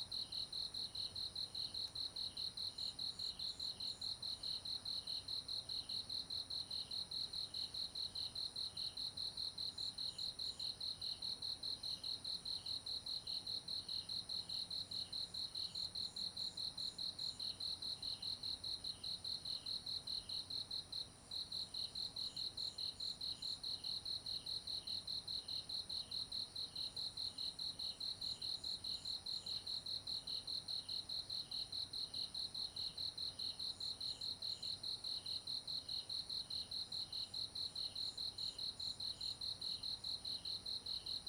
埔里鎮桃米里水上巷3-3, Taiwan - Insects sounds
Insects sounds
Zoom H2n Spatial audio